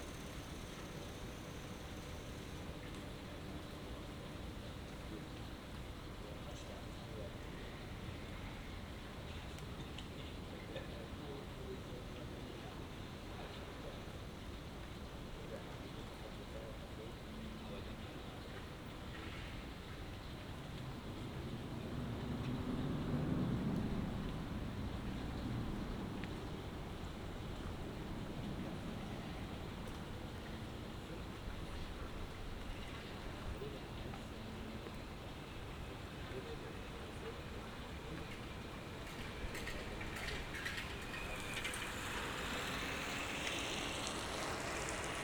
Berlin: Vermessungspunkt Maybachufer / Bürknerstraße - Klangvermessung Kreuzkölln ::: 22.08.2012 ::: 02:41